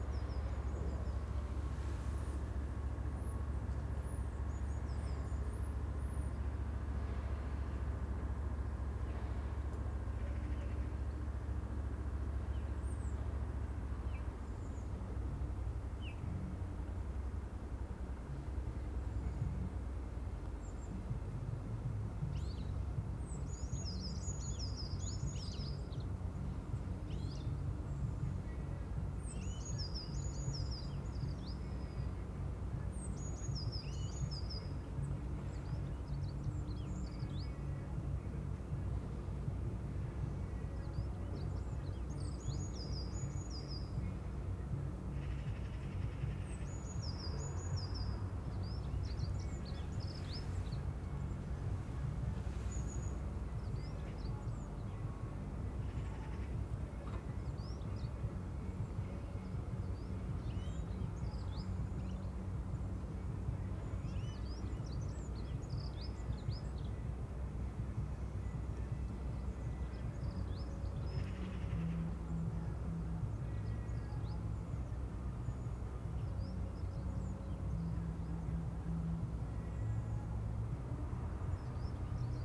Contención Island Day 39 inner northwest - Walking to the sounds of Contención Island Day 39 Friday February 12th
The Drive Westfield Drive Parker Avenue Brackenfield Road Brackenfield Court
Snow
drives cleared
a stone lion
with a mane of snow
Drifting sound
muffled music
and a building site dumper truck
North East England, England, United Kingdom, 12 February 2021